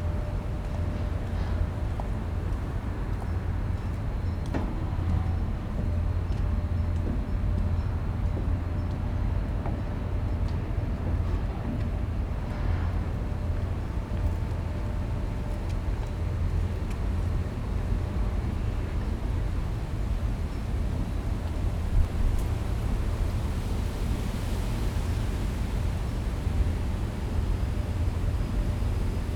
Niehl, Köln, Deutschland - pedestrian bridge, freighter passing
Köln Niehl, harbour area, on the pedestrian bridge. drones of a cargo ship passing nearby on the river Rhein.
(Sony PCM D50, DPA4060)